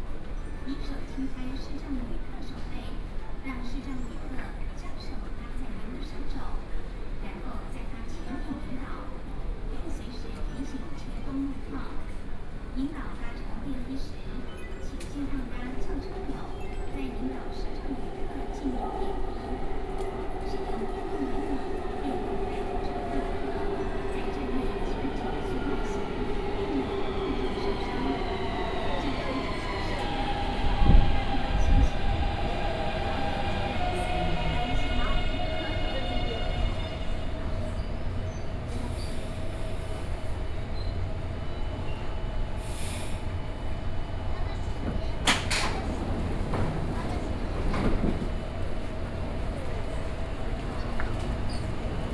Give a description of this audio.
soundwalk in the Zhongxiao Fuxing Station, Sony PCM D50 + Soundman OKM II